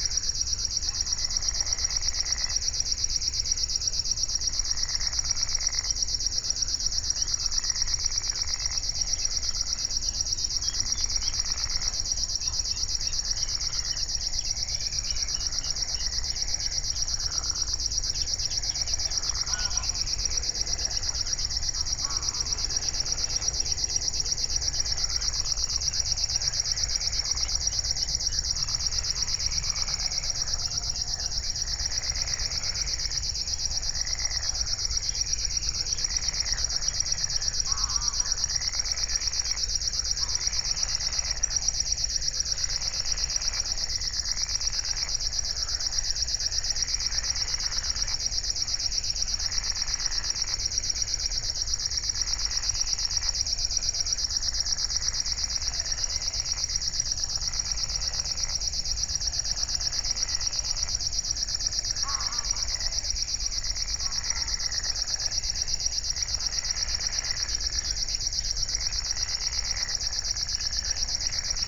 01:18 Berlin, Buch, Moorlinse - pond, wetland ambience
Deutschland, 4 June